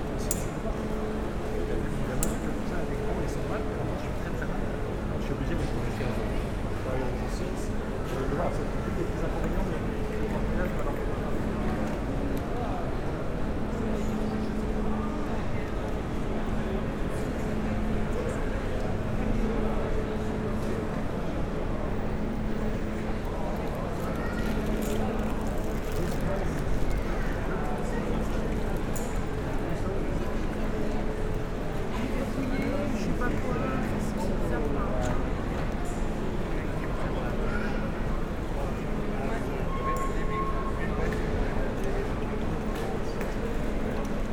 Gare Paris Montparnasse - Paris, France - Paris Montparnasse station
The Paris Motparnasse station on a very busy day. It's nearly impossible to hear people talking, as there's a lot of noise coming from the locomotives engines.